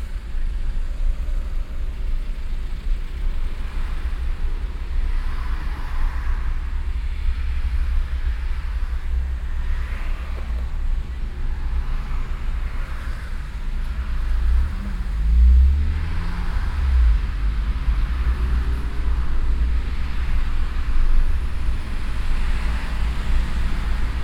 innere kanalstrasse stadtauswärts - nach köln nord - fahrt bis an ampel neusserstrasse - nachmittags - parallel stadtauswärts fahrende fahrzeuge - streckenaufnahme teil 06
soundmap nrw: social ambiences/ listen to the people - in & outdoor nearfield recordings